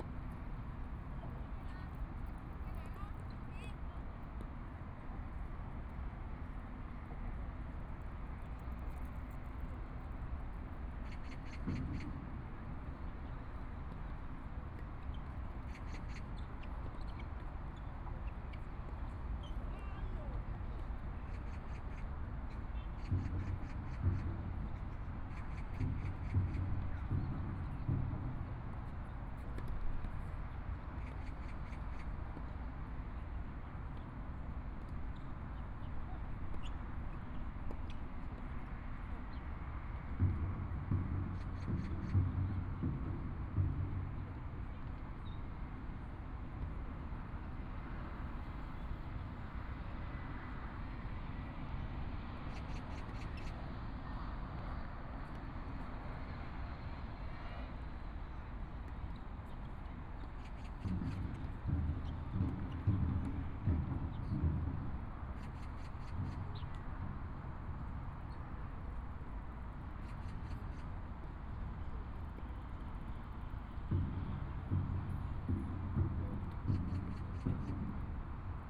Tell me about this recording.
sitting in the Park, Pedestrian, Traffic Sound, A lot of people riding bicycles through, Birds singing, Distant drums, Tennis Sound, Binaural recordings, ( Proposal to turn up the volume ), Zoom H4n+ Soundman OKM II